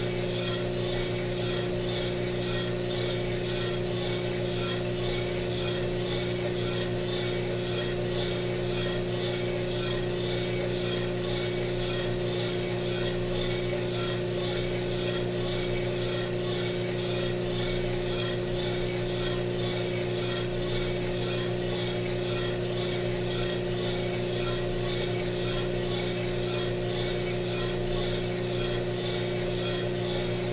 fuji royal coffee roaster
...roasting 1kg coffee beans...entire process